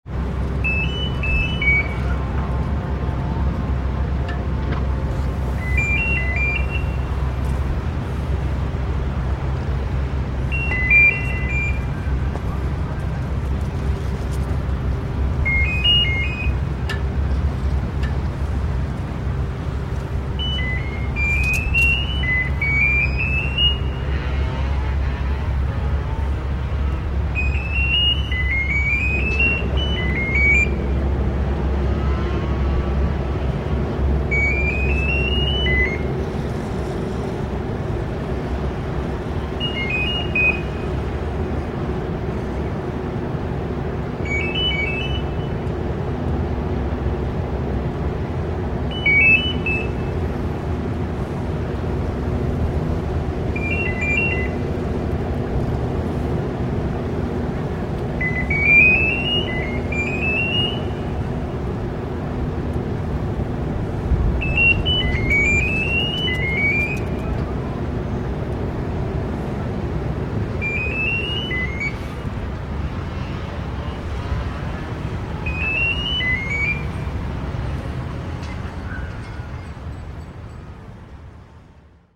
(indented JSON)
{
  "title": "Dunkerque Port, mystery audio device",
  "date": "2009-05-31 13:32:00",
  "description": "Mystery audio device in the Dunkirk port area.\nThis is what it looks like:\nAny idea what this could be?",
  "latitude": "51.05",
  "longitude": "2.37",
  "altitude": "1",
  "timezone": "Europe/Berlin"
}